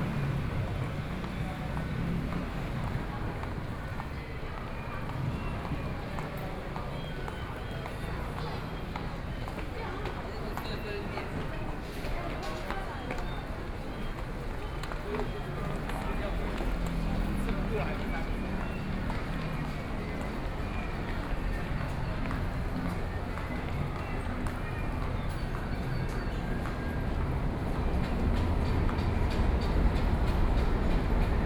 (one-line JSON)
{"title": "Shilin Station, Taipei - The plaza at night", "date": "2013-10-22 18:29:00", "description": "Go out to the plaza from the station, Traffic Noise, Above the train tracks running through, The crowd, Binaural recordings, Sony PCM D50 + Soundman OKM II", "latitude": "25.09", "longitude": "121.53", "altitude": "13", "timezone": "Asia/Taipei"}